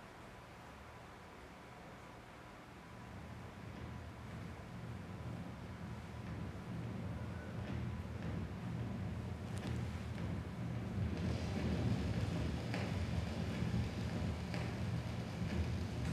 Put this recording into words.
participants in ignaz schick, martin tétrault, and joke lanz's turntable workshop interpret the sounds of the rainstorm happening outside in realtime. the sounds of the rain and thunder can be heard through the open windows.